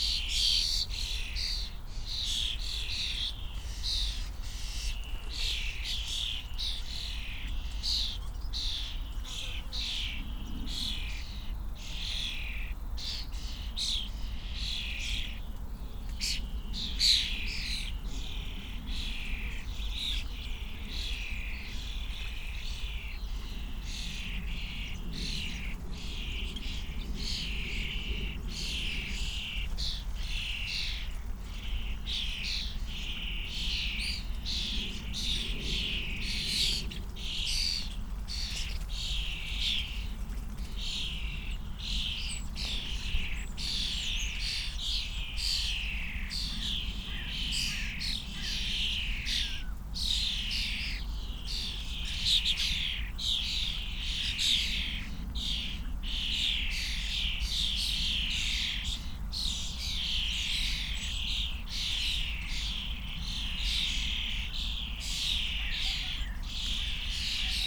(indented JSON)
{
  "title": "Tempelhofer Feld, Berlin - young starlings (Sturnus vulgaris)",
  "date": "2019-06-29 14:45:00",
  "description": "a bunch of young starlings (Sturnus vulgaris) tweeting and chatting in a bush, occasionaly fed by their parents\n(Sony PCM D50, Primo EM172)",
  "latitude": "52.48",
  "longitude": "13.40",
  "altitude": "49",
  "timezone": "Europe/Berlin"
}